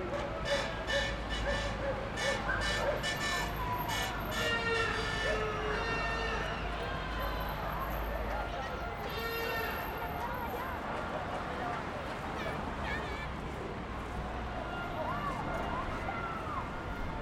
Aníbal Troilo, CABA, Argentina - Troilo Campeón
Argentina le gana a Nigeria
26 June 2018, ~17:00